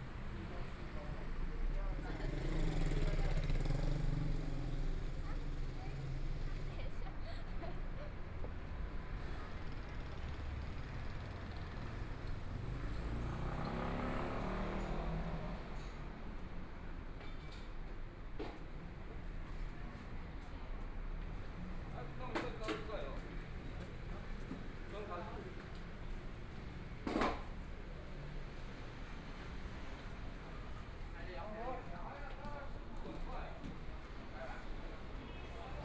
Jilin Rd., Taipei City - Walking on the road
Walking on the road, from Dehui St. to Nong'an St., A variety of restaurants and shops, Pedestrian, Traffic Sound, Motorcycle sound
Binaural recordings, ( Proposal to turn up the volume )
Zoom H4n+ Soundman OKM II
15 February, 17:46